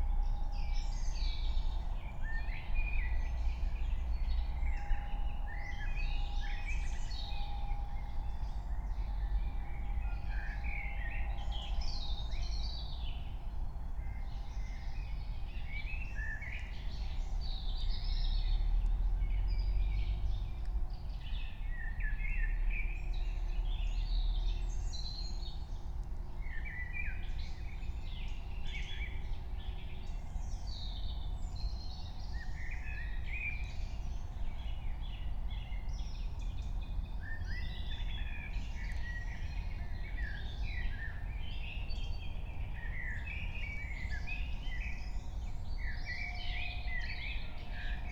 04:00 Berlin, Königsheide, Teich - pond ambience